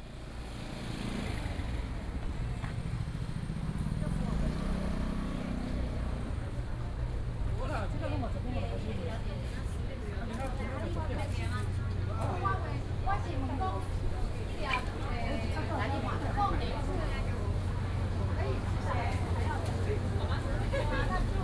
農學市集, Da’an Dist., Taipei City - Agricultural Market
Agricultural Market
Binaural recordings
Sony PCM D100+ Soundman OKM II
Taipei City, Taiwan